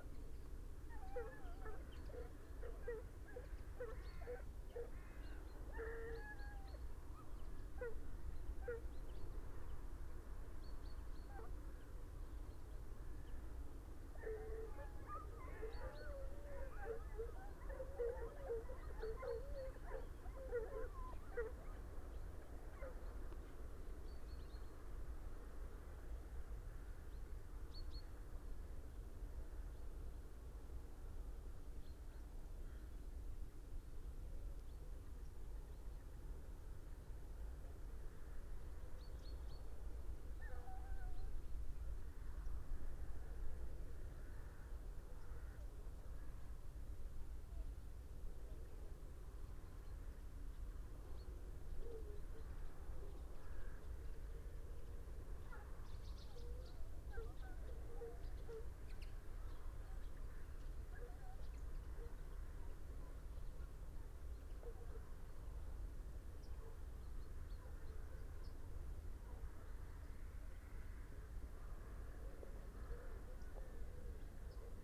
horses and hounds ... don't know if this was fox hunting ... banned ... trail hunting ... drag hunting ..? opportunistic recording using a parabolic ... bird calls ... golden plover ... buzzard ... carrion crow ... red-legged partridge ... meadow pipit ... it has been mentioned that it also might be 'cubbing' ... integrating young dogs into the pack ...
Yorkshire and the Humber, England, UK